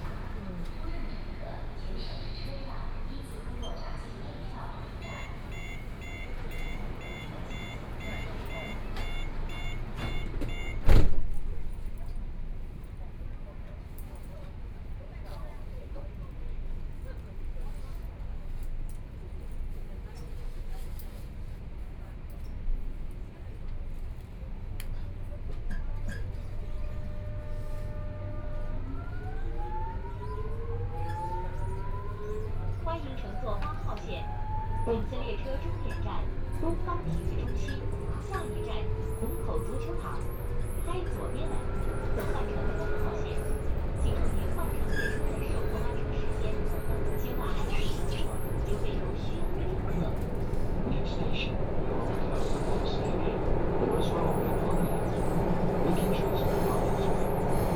Hongkou District, Shanghai - Line 8(Shanghai metro)
from Siping Road station To Hongkou Football Stadium station, Binaural recording, Zoom H6+ Soundman OKM II
Hongkou, Shanghai, China, 23 November 2013, 11:47